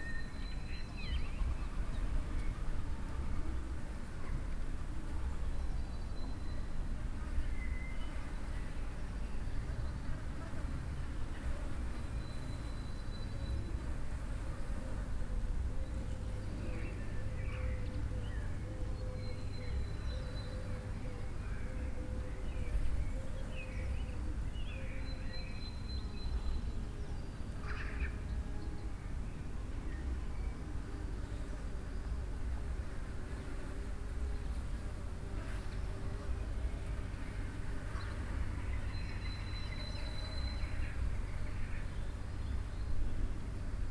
Christuskirche, Hamm, Germany - Easter Sunday April 2020
lingering on the old brig walls in the sun for a while, amazed of the quiet street and the sounds of flies gathering here in the warmth… the church is closed, no Easter gatherings here...